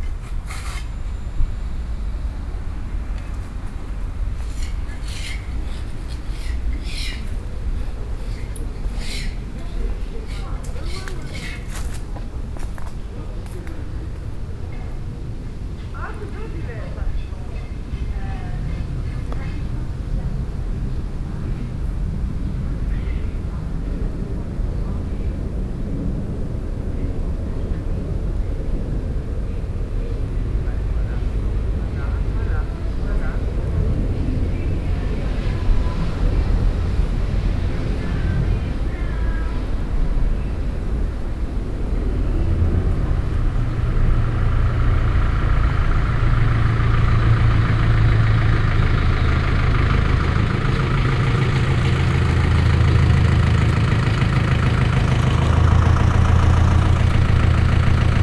Watermael-Boitsfort - Cité-jardin Floréal Garden City